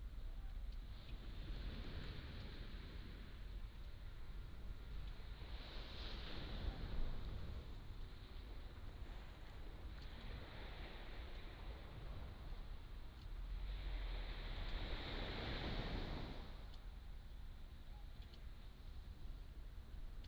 雙口, Lieyu Township - Birds and the waves
At the beach, Birds singing, Sound of the waves
金門縣 (Kinmen), 福建省, Mainland - Taiwan Border, 4 November 2014